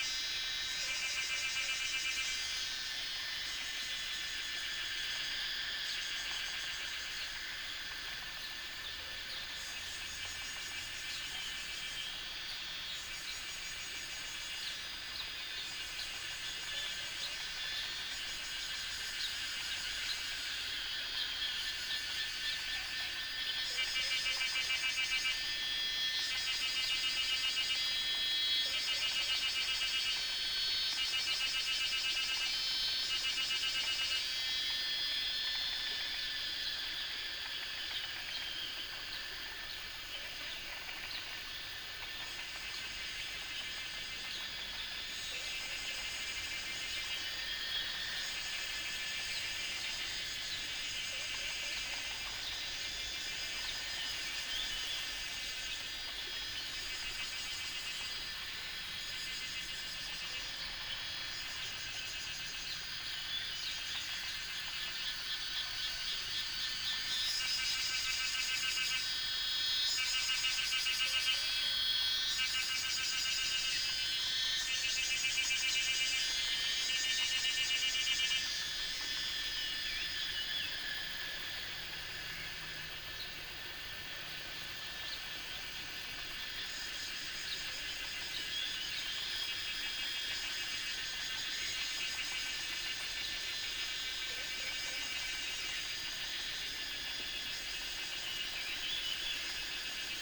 中路坑, 桃米里, Puli Township - Cicada, Bird and Frog sounds
Cicada sounds, Bird sounds, Frog sounds
Nantou County, Puli Township, 機車道, 6 June 2016, 17:47